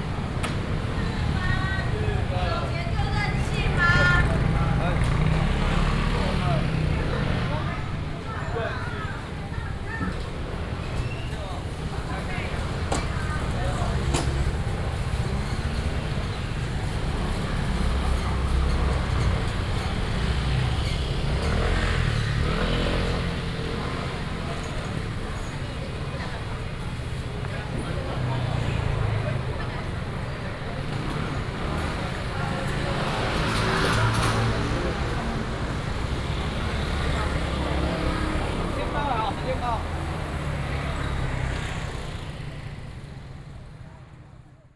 桃園果菜市場, Taoyuan City - Vegetables and fruit wholesale market
walking in the Vegetables and fruit wholesale market
Taoyuan District, Taoyuan City, Taiwan